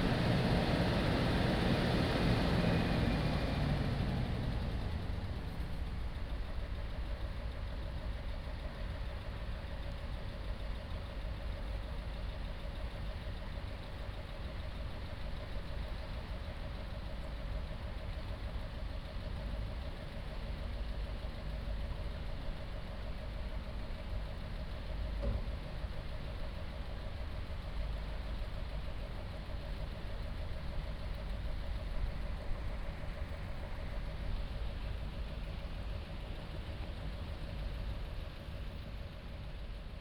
Rheinufer, Riehl, Köln - dredge at work
Köln, Rheinufer, river Rhine, dredge at work
(Sony PCM D50, OKM2)